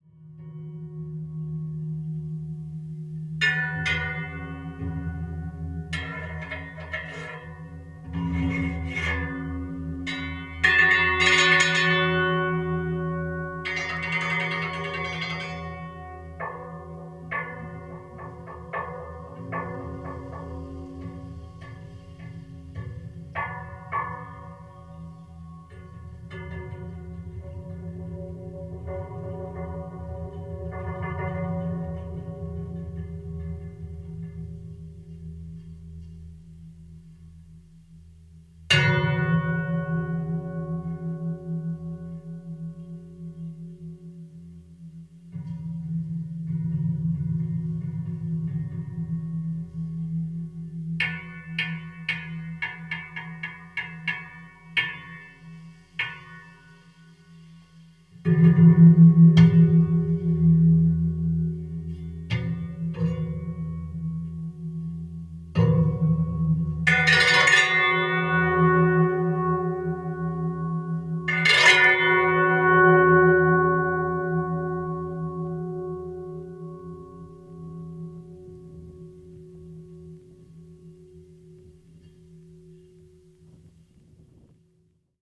Coop Himmelb(l)au railing test, Vienna
testing the metal railing on a Coop Himmelb(l)au building in Vienna
Vienna, Austria, 7 August 2011